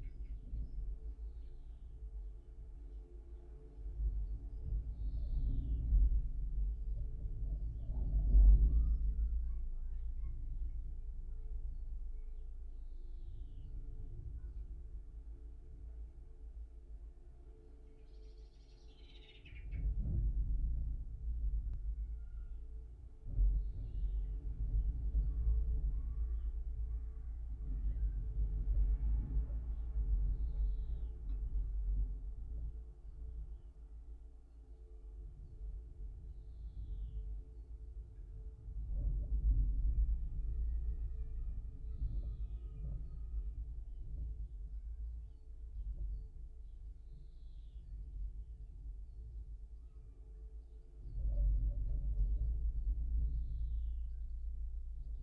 in the Forest Garden - tripod ladder
Strong winds ebb and flow through the Forest Garden caught in the Japanese tripod ladder, school children in the playground, Chaffinch, Blackbird and House Sparrows, vehicles rush past on the lane.
25 February 2022, 11:23